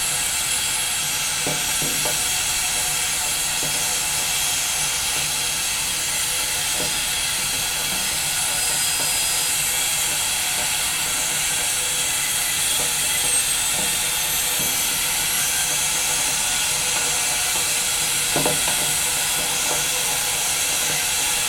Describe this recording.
boiling water for tea in a tin kettle. Buildup and part of the cool down. (sony d50)